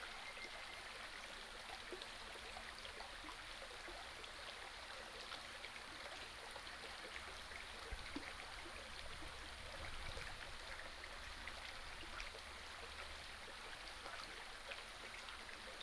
種瓜坑溪, 埔里鎮成功里, Nantou County - Small streams
Small streams
Binaural recordings
Sony PCM D100+ Soundman OKM II